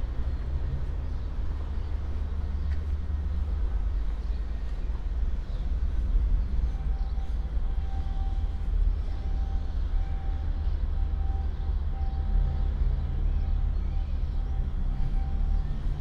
cemetery, Esch-sur-Alzette, Luxemburg - walking
short walk over the cemetery of Esch-sur-Alzette
(Sony PCM D50, Primo EM172)
Canton Esch-sur-Alzette, Lëtzebuerg